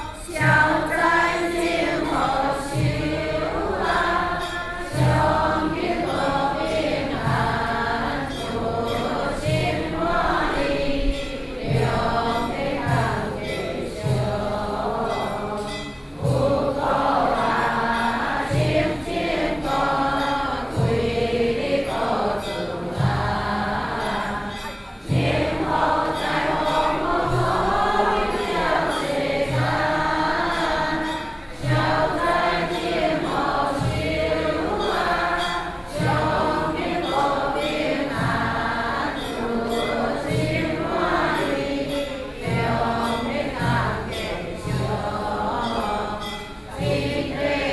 Taipei, Taiwan - Chant Buddhist scriptures
Taipei City, Taiwan